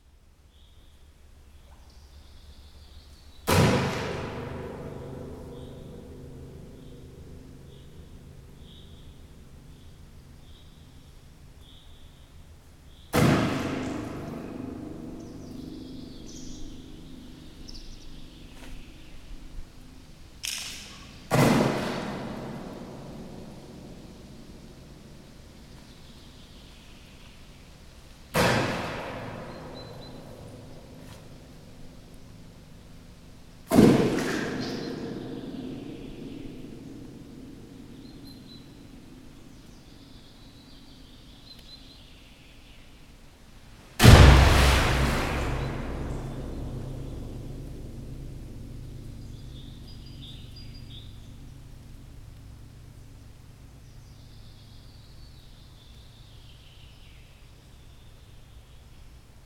dropping stones into soviet missile silo: Valga Estonia
17 June, 02:32